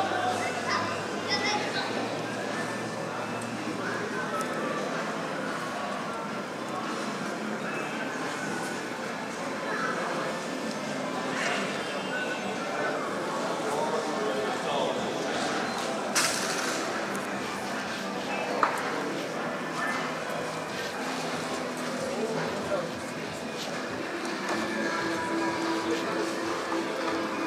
Abenteuerhallen - #EVOKE 2011
Before prize giving ceremony